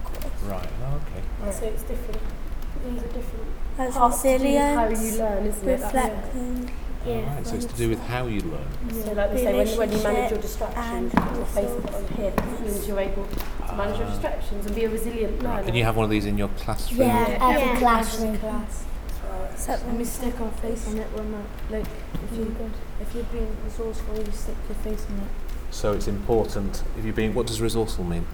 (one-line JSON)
{"title": "Main hall learning tree 5/6S", "date": "2011-03-21 14:50:00", "latitude": "50.39", "longitude": "-4.10", "altitude": "72", "timezone": "Europe/London"}